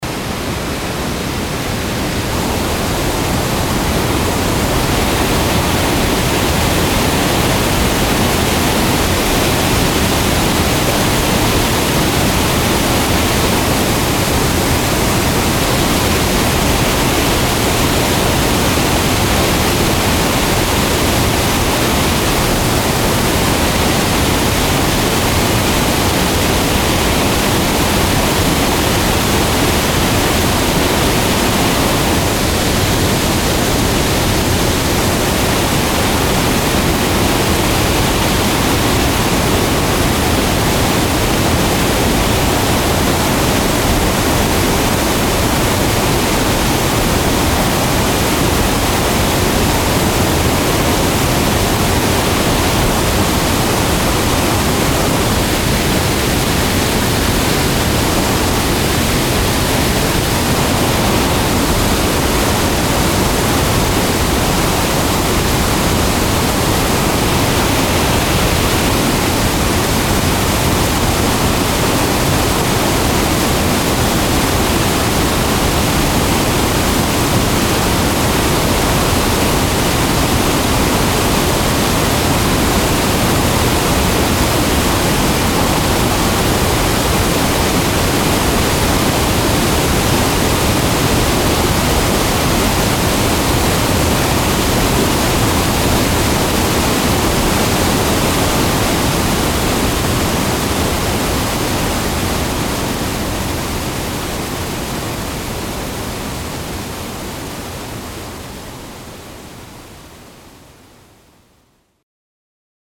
vianden, dam wall, water outlet
On the dam. The hissing sound of the water flushing powerful out of the open water outlet.
Vianden, Staudamm, Wasserabfluss
Auf dem Staudamm. Das rauschende Geräusch vom Wasser, das kraftvoll aus dem offenen Wasseraustritt des Staudammes strömt.
Vianden, mur du barrage, vanne de sortie de l'eau
Sur le barrage. L’eau qui s’écoule puissamment et à grand bruit de la vanne ouverte.